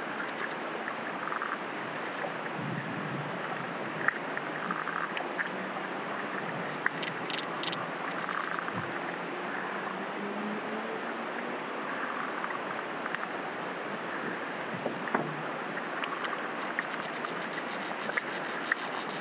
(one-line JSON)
{"title": "Cadder Wharf, UK - The Forth & Clyde Canal 005: Beneath a Kingfisher", "date": "2020-06-07 21:18:00", "description": "Recorded with a pair of Aquarian Audio H2a hydrophones and a Sound Devices MixPre-3.", "latitude": "55.92", "longitude": "-4.22", "altitude": "53", "timezone": "Europe/London"}